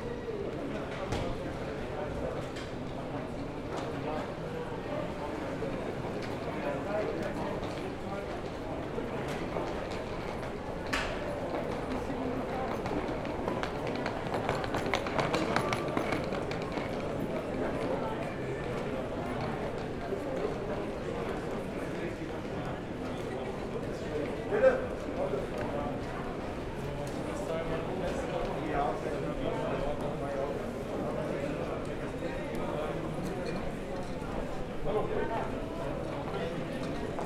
Frankfurt (Main) Flughafen Regionalbahnhof, Hugo-Eckener-Ring, Frankfurt am Main, Deutschland - Corona Test STation
A new moment at the airport in September 2020 was the Corona Test Station, where travellers could make a test after coming from anohter area. It is heard how people are explaining how the procedure is functioning, where they get the result of the test, other travellers are discussing in chinese and other languages.